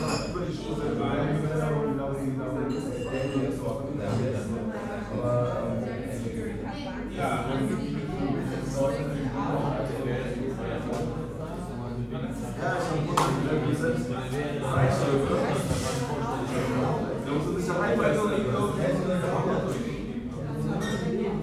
berlin, weydingerstraße: bar - the city, the country & me: bar people
the city, the country & me: august 6, 2011
6 August 2011, 03:43